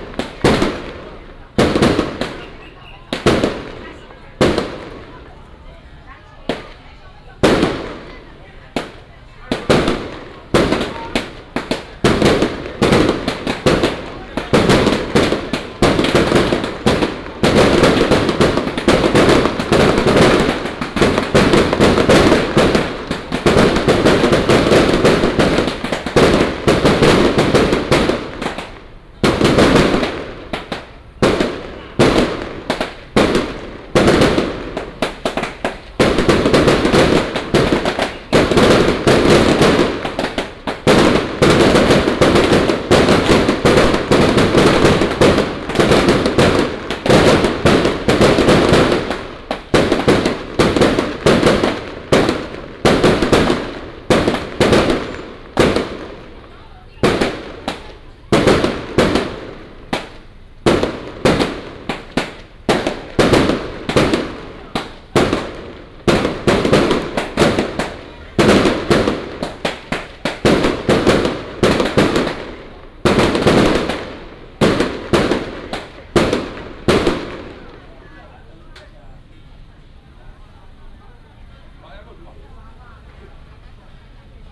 Fireworks and firecrackers, Traffic sound, Baishatun Matsu Pilgrimage Procession
Huwei Township, Yunlin County, Taiwan